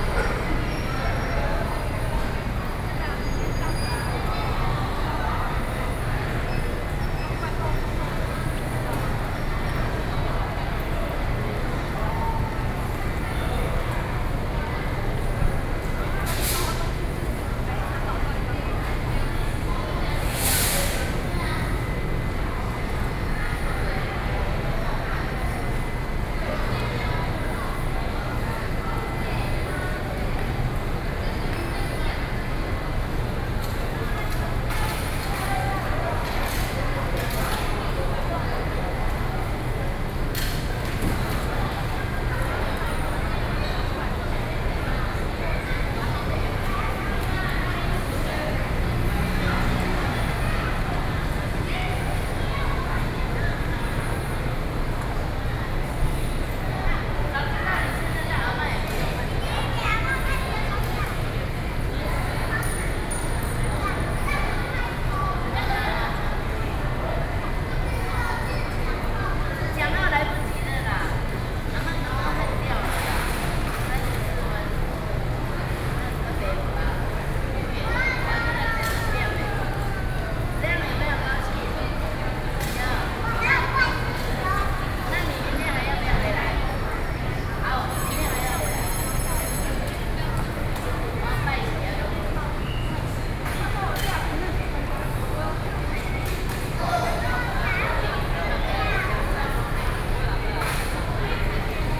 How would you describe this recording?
in the Station hall, Sony PCM D50 + Soundman OKM II